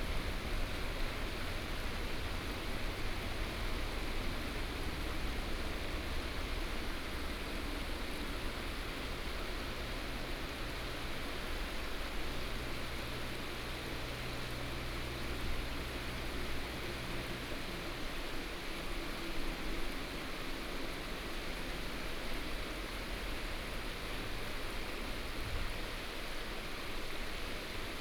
太麻里溪, Taimali Township, Taitung County - River sound
On the river bank, stream
Binaural recordings, Sony PCM D100+ Soundman OKM II